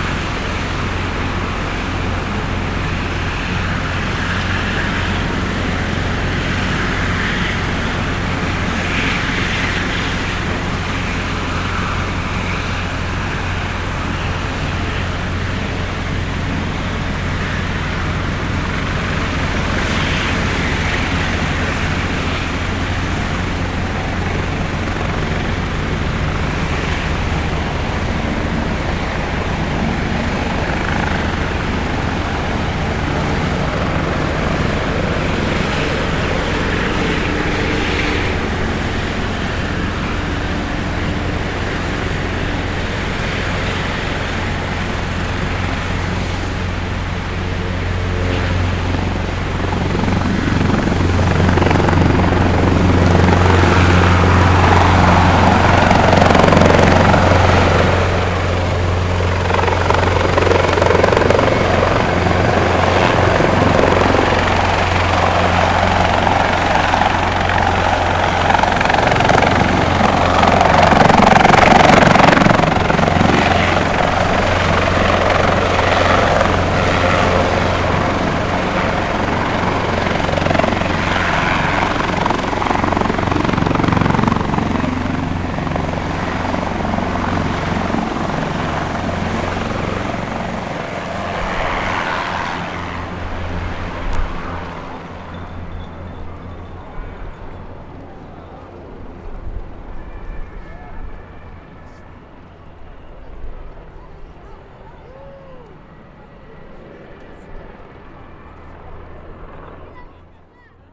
Avenue du Maréchal Gallieni, Paris, France - HELICOPTER Taking OFF - Place des Invalides - France National Day
5 helicopter taking off from the "place des invalides" in front of the "musée des armées" during the french national day.
Recorder: Zoom H5